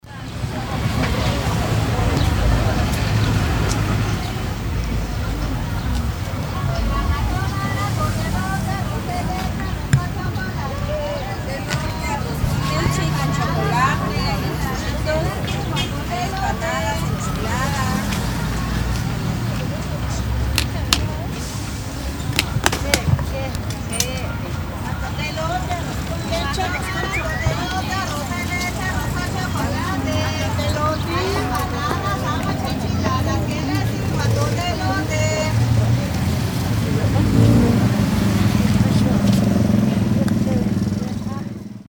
Parque Benito Juárez
Street food vendors
Siquichum, Guatemala, 1 July, 18:24